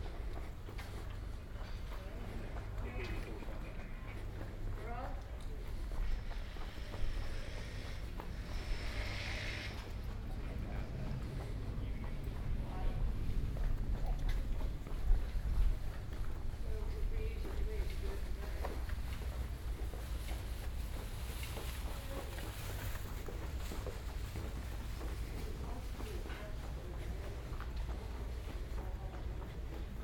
Folkestone, Regno Unito - GG Folkestone-Harbour-C 190524-h14-20
Total time about 36 min: recording divided in 4 sections: A, B, C, D. Here is the third: C.